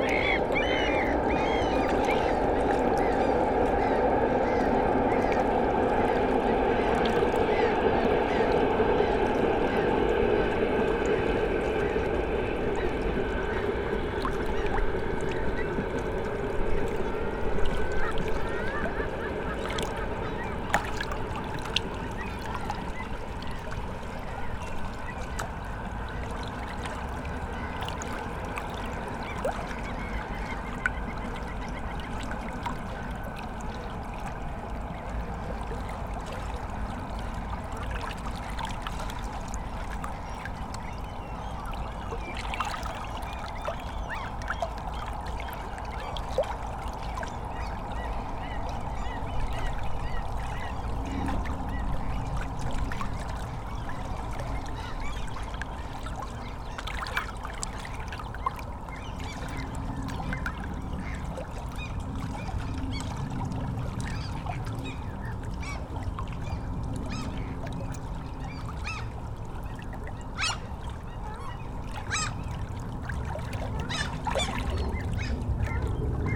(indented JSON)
{
  "title": "Fisksätra Holme - Sur le bord de l'ïle",
  "date": "2013-04-30 13:34:00",
  "description": "Sur le bord de l'île, on entend toujours en fond les voitures. Parfois aussi des bateaux et régulièrement le train.",
  "latitude": "59.30",
  "longitude": "18.25",
  "altitude": "9",
  "timezone": "Europe/Stockholm"
}